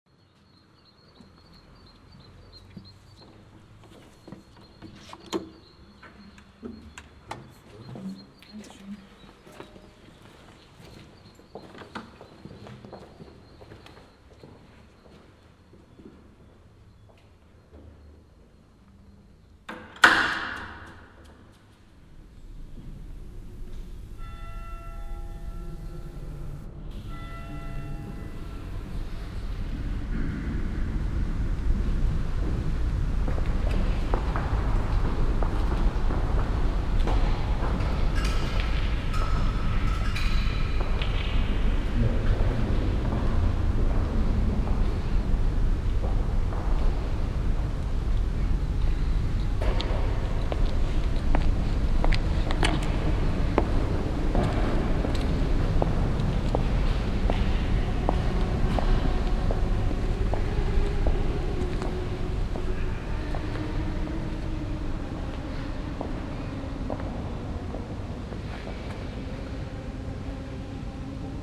velbert, neviges, marienkirche - velbert, neviges, mariendom
collage of sounds recorded at and in the mariendom-neviges - opening of the main door, walk thru the cathedrale, sounds of people whispering, singing og a choir
project: :resonanzen - neanderland - soundmap nrw: social ambiences/ listen to the people - in & outdoor nearfield recordings, listen to the people